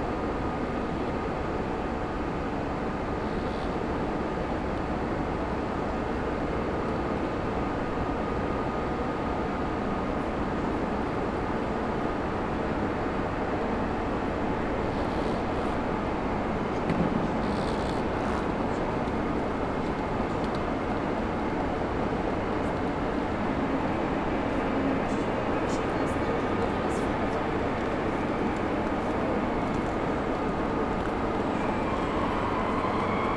Am Hauptbahnhof Ebene A // gegenüber Gleis, Frankfurt am Main, Deutschland - Platform18 hall corona times
This recording starts at platform 18, where the international trains use to leave, but not half past three. Voices passes by, another beggar is asking for money, different voices are audible in different languages.